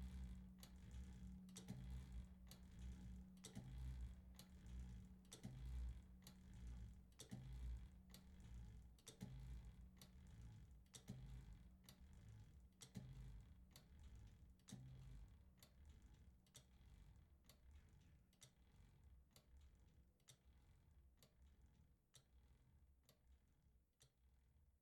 Rue Alphonse Foucault, Senonches, France - Senonches - Église Notre Dame
Senonches (Eure-et-Loir)
Église Notre Dame
volée Tutti - 3 cloches
France métropolitaine, France